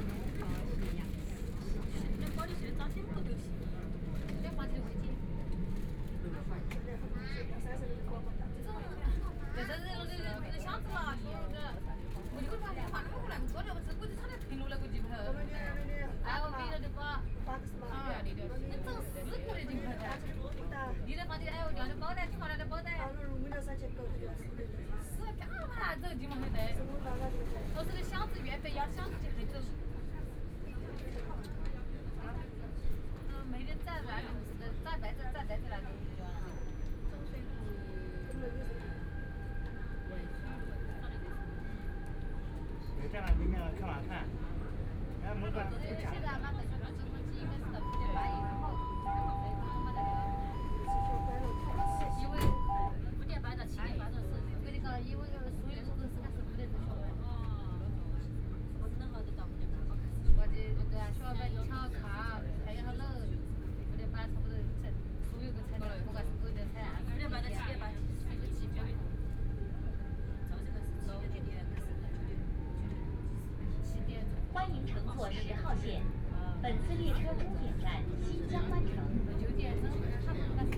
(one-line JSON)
{"title": "Hongkou District, Shanghai - Line 10(Shanghai metro)", "date": "2013-11-21 16:59:00", "description": "From East Nanjing Road to Tongji University station, The sound of the crowd, Train broadcast messages, Binaural recording, Zoom H6+ Soundman OKM II", "latitude": "31.27", "longitude": "121.49", "altitude": "9", "timezone": "Asia/Shanghai"}